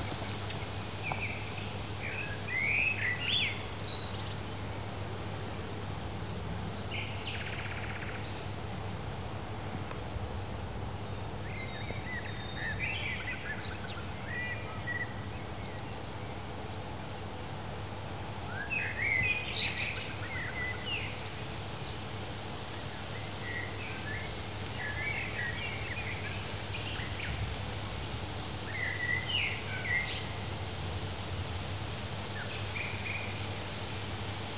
Morasko, UAM university campus - evening forest space
recording evening ambience of a forest patch behind the UAM campus. birds spacing their calls. some timid frog gobble. had to crank up the gain to get at least some field depth so the recording is flooded with mic self-noise. deep down there is a low freq drone coming from many power stations around.